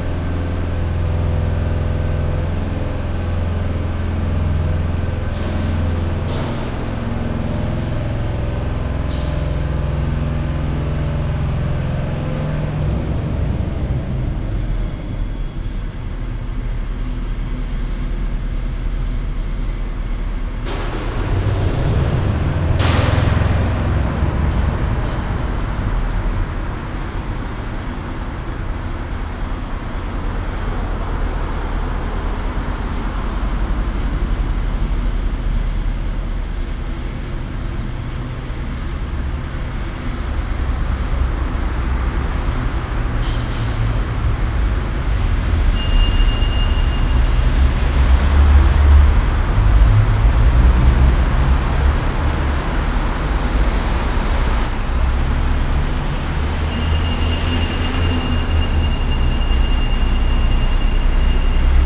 cologne, tunnel, trankgasse - koeln, tunnel, trankgasse
verkehr im tunnel, mittags
soundmap nrw: